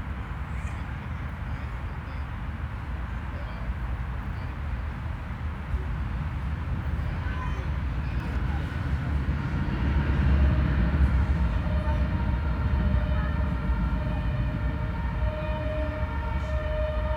The green areas amongst apartment blocks here are quiet spaces in the center of the city. There is not so much activity. Busy roads are distant while children playing close by. A helicopter passes by sounding loud for a moment. Sirens come and go.
Trams rumble on the main roads contributing to the constant bass frequencies that permeate many city areas.
Deutschland, 1 September 2021